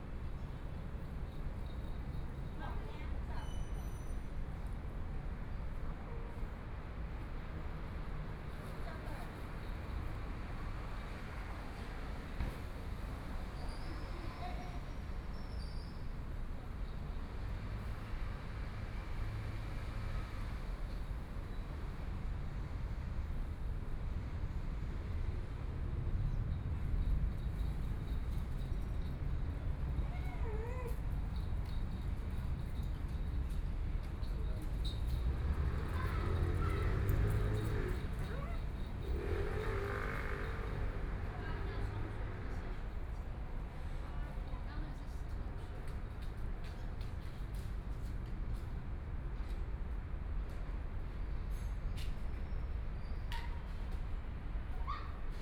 XingYa Park, Taipei City - in the Park
Holiday in the Park, Sitting in the park, Traffic Sound, Birds sound, Children and parents playing badminton
Please turn up the volume a little. Binaural recordings, Sony PCM D100+ Soundman OKM II